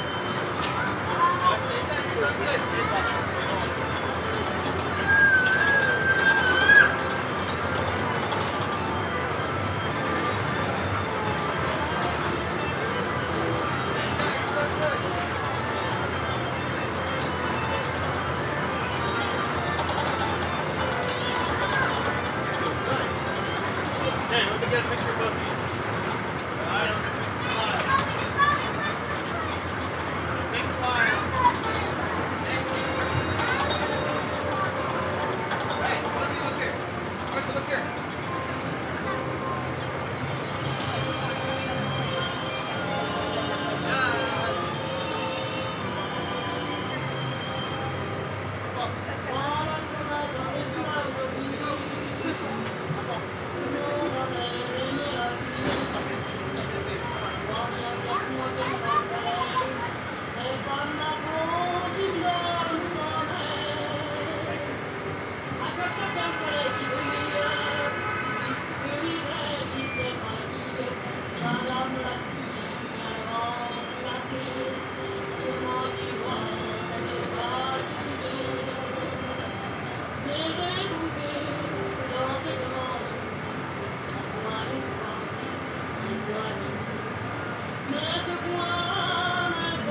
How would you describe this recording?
"Le Carrousel in Bryant Park, specially created to complement the park's French classical style, is an homage to both European and American carousel traditions."